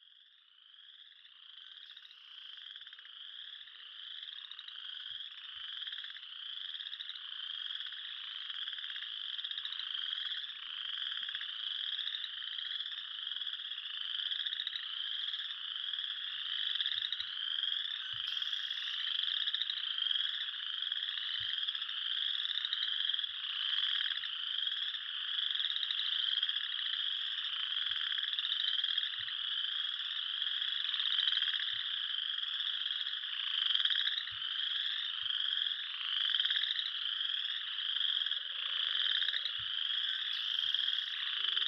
Walkerton, IN, USA - Passing train quieting a frog chorus, Walkerton, IN, USA
Recorded on a Zoom H4 Recorder
Indiana, United States of America, 12 April 2020, 12:00